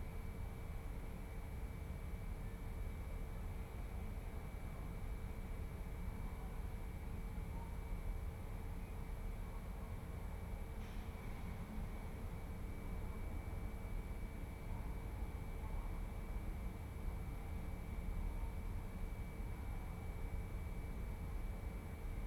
"Round midnight 4’33" almost silence” Soundscape
Chapter XLVIII of Ascolto il tuo cuore, città, I listen to your heart, city
Friday April 17th - Saturday 18nd 2020. Fixed position on an internal terrace at San Salvario district Turin, thirty eight/thirty nine days after emergency disposition due to the epidemic of COVID19. Same position as previous recording.
Start at 11:57:49 p.m. end at 00:02:12 a.m. duration of recording 4'33''.
Piemonte, Italia, 17 April